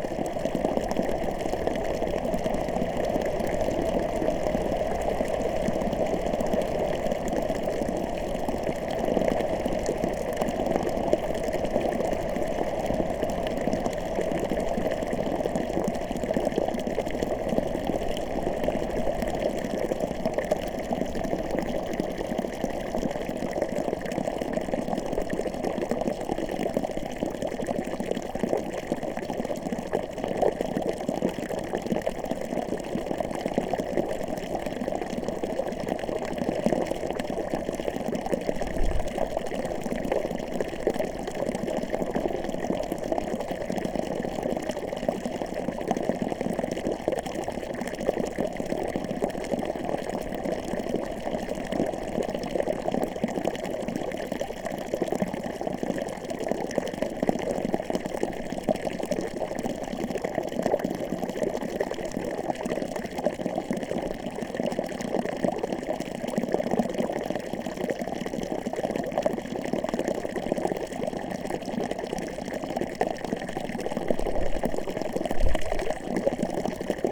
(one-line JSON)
{"title": "church, migojnice, slovenija - water spring", "date": "2014-02-15 23:38:00", "description": "full moon, night time, strong wind, from within glass cup, attached to pipe of the water spring", "latitude": "46.23", "longitude": "15.17", "timezone": "Europe/Ljubljana"}